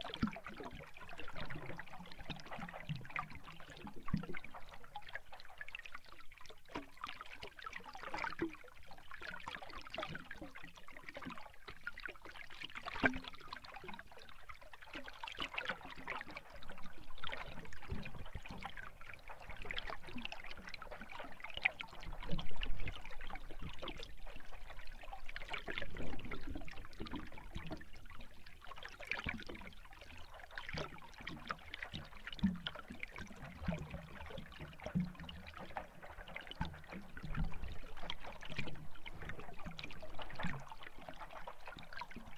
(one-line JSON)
{"title": "under binkchorstbrugge, Den haag", "date": "2011-12-15 16:00:00", "description": "hydrophones under water, nikolaj Kynde", "latitude": "52.06", "longitude": "4.34", "altitude": "2", "timezone": "Europe/Amsterdam"}